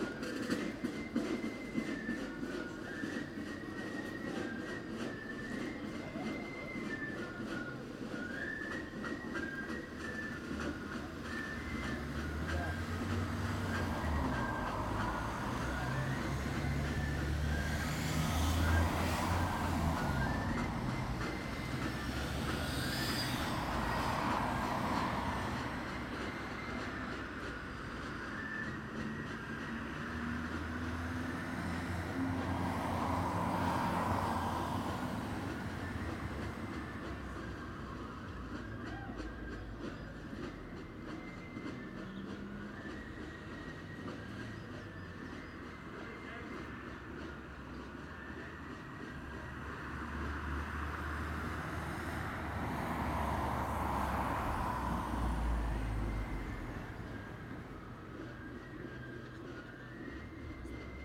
Hawick, Scottish Borders, UK - Hawick Common Riding - Drum & Fife Recording
The Hawick Common Riding festival celebrates the capture of an English Flag in 1514 by the youth of Hawick at a place called Hornshole, as well as the ancient custom of riding the marches or boundaries of the common land. The day begins at 6am with the Drum & Fife band setting out past the Church, playing loudly to "rouse the town". This is the sound of the situation as they passed us beside the Church. There is a nice acoustic, because the sounds of the band passing reflect off the walls of the buildings opposite the church. Recorded with Naiant X-X mics with little windjammers on them, held about a foot apart.
Scotland, United Kingdom, 7 June 2013